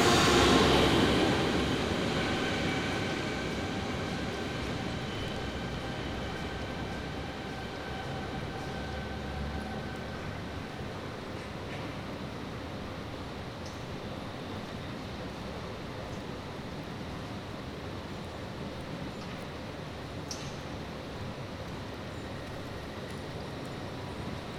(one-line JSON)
{"title": "Station Hollands Spoor, Den Haag, Nederland - Station Hollands Spoor", "date": "2014-04-04 09:08:00", "description": "Binaural recording made on a platform at Train station Hollands Spoor, The Hague.", "latitude": "52.07", "longitude": "4.32", "altitude": "6", "timezone": "Europe/Amsterdam"}